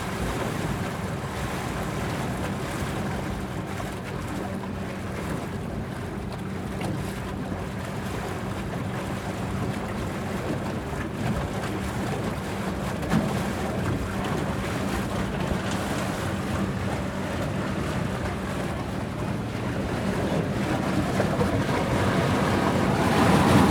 Crossing the Rhine with the ferry boat "Piwipper Fähre"
soundmap NRW
topographic field recordings and soundscapes